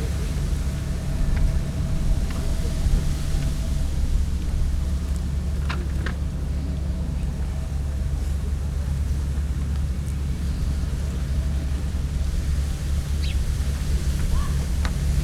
Nagranie przystani promowej oraz odpływającego promu w Świbnie.
Poland